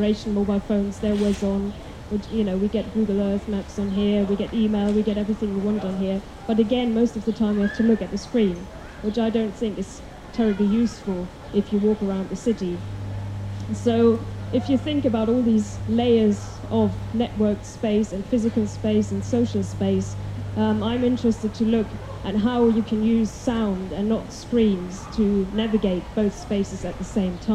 alexanderplatz, tuned city, navigating hybrid spaces
tuned city, berlin, alexanderplatz 03.07.2008, 16:15
Frauke Behrendt's talk considers how sound can be a means of engaging with hybrid spaces - layerings of physical and digital architecture - and particularly how this has been explored in art projects. Research in Sound Studies that considers mobile technology often suggests a withdrawal from public spaces. Here, the question is how we can use sound and mobile technology to engage with urban spaces.
the lectures took place outdoor on the staircase under one of the wings of teh tv tower, the speakers could only be heard by wireless headphones. this recording was made by pressing the headphone to the microphone, this way merging the ambient with the lecture.
3 July 2008, 16:15